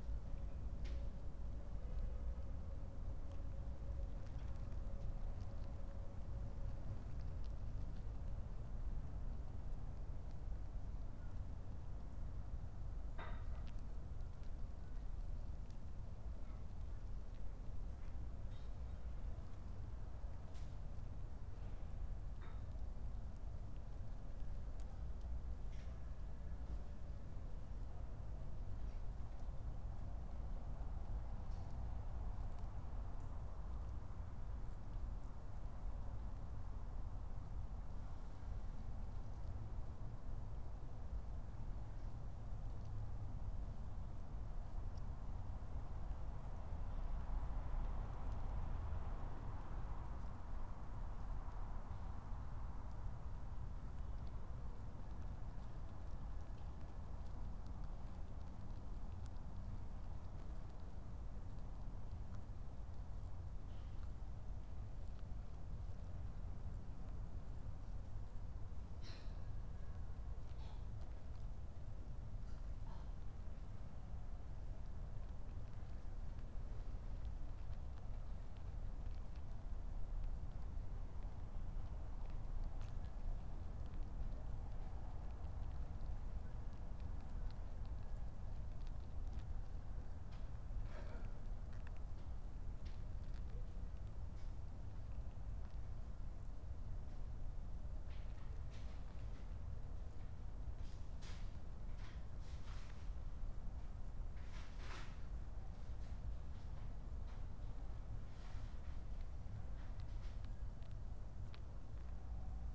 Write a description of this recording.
23:14 Berlin, Neukölln, (remote microphone: Primo EM272/ IQAudio/ RasPi Zero/ 3G modem)